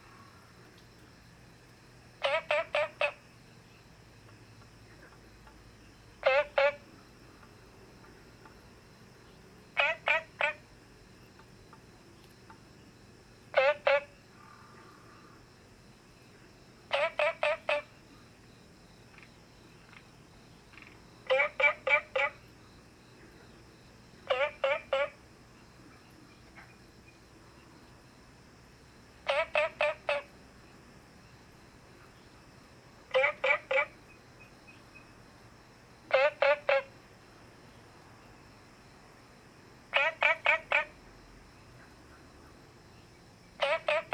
樹蛙亭, Puli Township - Frogs chirping
Frogs chirping
Zoom H2n MS+XY